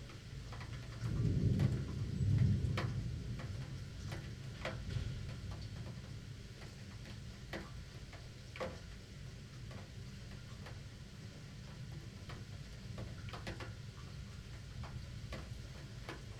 berlin, friedelstraße: backyard window - the city, the country & me: backyard window, thunderstorm
thunderstorm, rain, recorder inside of a double window
the city, the country & me: may 26, 2009
99 facets of rain